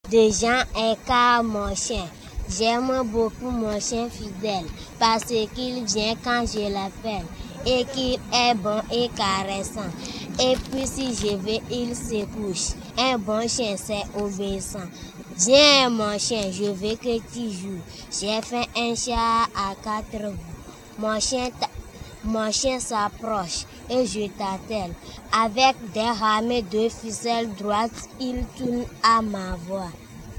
Avepozo, Togo, rec feb. 1984
6 year old Marie is reciting a french text learned in school